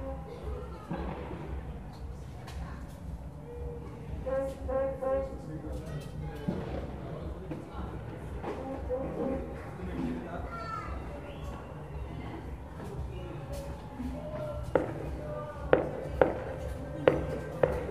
Lisbon, Portugal - Countdown to new year 2016, Lisbon
Sounds of the neighbourhood, countdown to new year 2016 and fireworks.